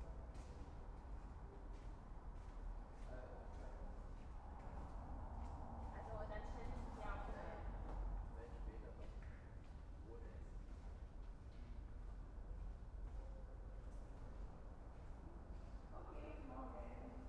Husemannstraße, Berlin, Germany - Night, spring, conversation
Sidestreet, Berlin, three people conversation, doors slammed, quiet night
Deutschland